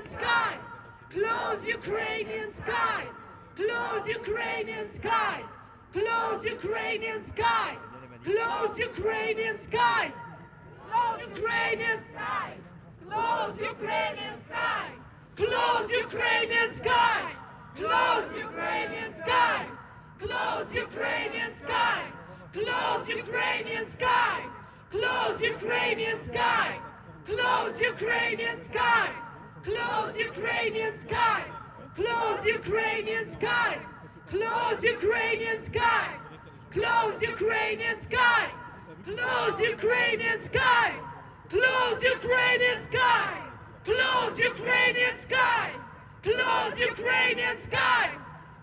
Place Jean Rey, Etterbeek, Belgique - Demonstration - speeches for Ukraine
Speeches at the end of the manifestation.
Reverberation from the buildings all around.
Tech Note : Ambeo Smart Headset binaural → iPhone, listen with headphones.